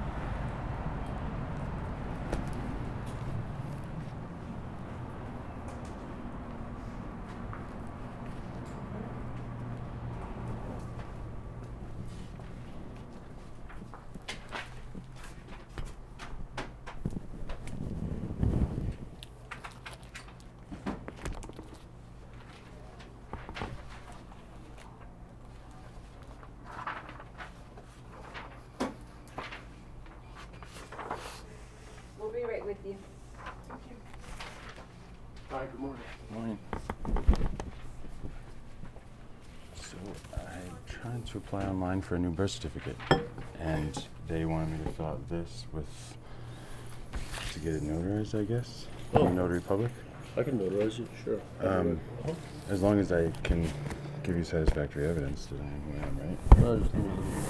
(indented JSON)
{
  "date": "2018-06-29 08:11:00",
  "description": "ekalos also has need of the notary public stamp of approval, so chinqi listens and records as we TCB. \"lets do some notarizing...\" some loud laughter and conversations from mr. vaughn's coworkers... the trip was a wild success!",
  "latitude": "35.69",
  "longitude": "-105.94",
  "altitude": "2127",
  "timezone": "America/Denver"
}